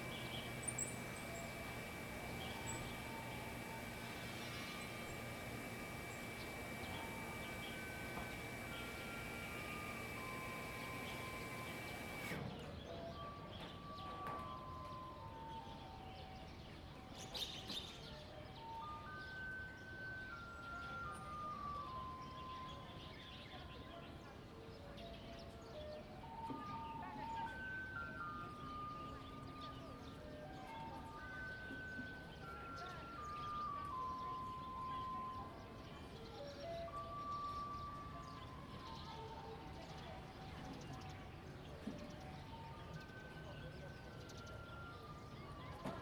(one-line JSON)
{"title": "蕃薯村, Shueilin Township - Small village", "date": "2015-02-18 08:55:00", "description": "Small village, Pumping motor sound, broadcast message, the sound of birds\nZoom H2n MS +XY", "latitude": "23.54", "longitude": "120.22", "altitude": "6", "timezone": "Asia/Taipei"}